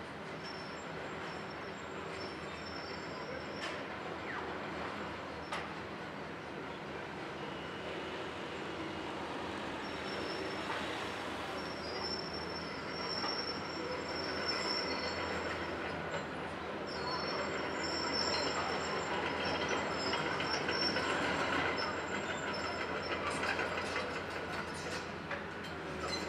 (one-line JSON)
{"title": "L'Aquila, San Bernardino-sagrato - 2017-05-29 05-S.Bernardino", "date": "2017-05-29 13:35:00", "latitude": "42.35", "longitude": "13.40", "altitude": "725", "timezone": "Europe/Rome"}